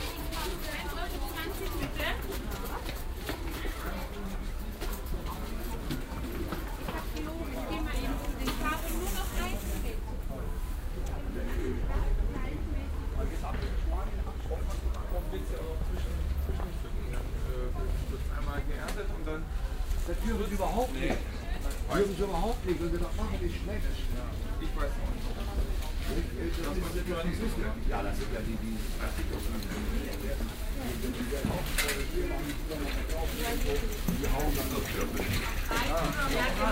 osnabrück, katharinenkirche, markt
marktambience osnarbrück, katharinenkirche
project: social ambiences/ listen to the people - in & outdoor nearfield recordings